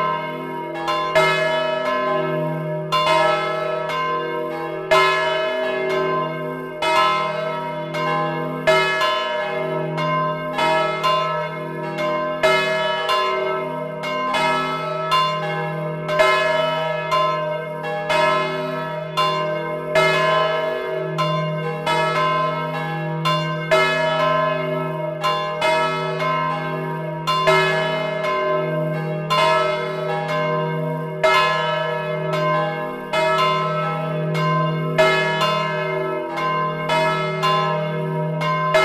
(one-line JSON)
{"title": "Court-St.-Étienne, Belgique - Les cloches", "date": "2014-07-12 19:00:00", "description": "Recording of the Court-St-Etienne bells, inside the bell tower.", "latitude": "50.64", "longitude": "4.57", "altitude": "79", "timezone": "Europe/Brussels"}